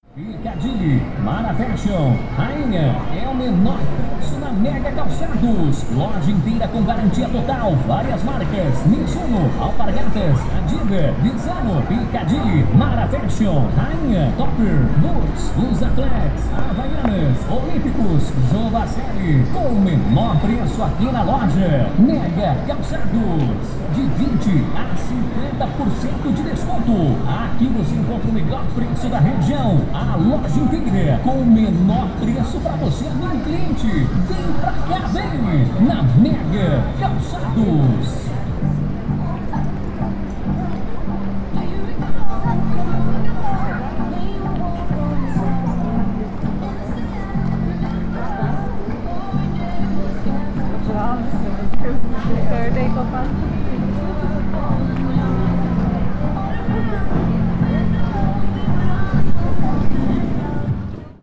Anuncio - Centro, Londrina - PR, Brasil - Calçadão: Anuncio
Panorama sonoro gravado no Calçadão de Londrina, Paraná.
Categoria de som predominante: antropofonia (anúncio, veículos e vozes).
Condições do tempo: ensolarado, vento, frio.
Data: 23/05/2016.
Hora de início: 14h39.
Equipamento: Tascam DR-05.
Classificação dos sons
Antropofonia:
Sons Humanos: Sons da Voz; Fala; Sons do Corpo; Passos.
Sons da Sociedade: Sons do Comércio; Loja; Música de Lojas; Anuncio e Promoções.
Sound panorama recorded on the Boardwalk of Londrina, Paraná.
Predominant sound category: antropophony (advertisement, vehicles and voices).
Weather conditions: sunny, wind, cold.
Date: 05/23/2016.
Start time: 14h39.
Device: Tascam DR-05
Human Sounds: Voice Sounds; Speaks; Sounds of the Body; Steps.
Sounds of the Society: Sons of Commerce; Store; Music Stores; Advertisement and Promotions.